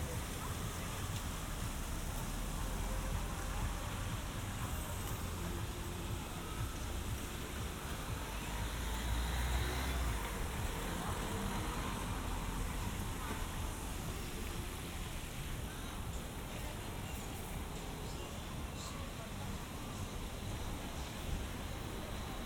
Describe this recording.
short walk with ambeo headset on Dzintars Concert Hall street